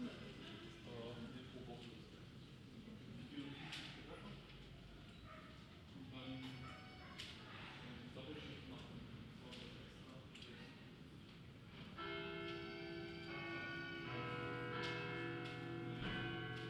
Münzgasse, Tübingen - Münzgasse, Tübingen 2
Mittelalterliche Gasse mit Fachwerkhäusern, Fußgängerzone.
Kirchenglocken, Fußgänger, Fahrrad, leichter Regen.
Church bells, pedestrians, bicycle, light rain.